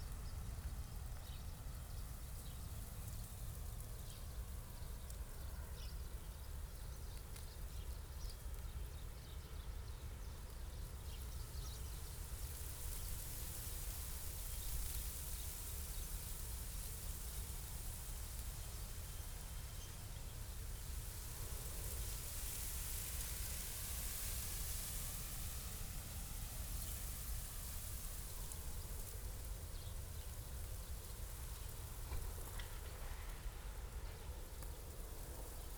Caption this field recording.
light wind in a grainfield, between Zejtun and Marsaxlokk, (SD702 DPA4060)